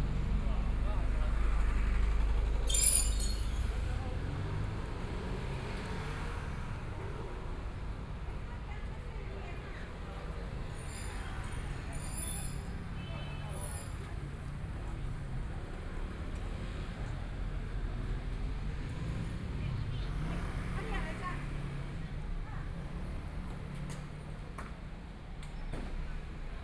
Jinzhou St., Zhongshan Dist. - walking in the Street
walking in the Street, Traffic Sound, From the park to the MRT station, Binaural recordings, ( Keep the volume slightly larger opening )Zoom H4n+ Soundman OKM II
Zhongshan District, Taipei City, Taiwan, February 2014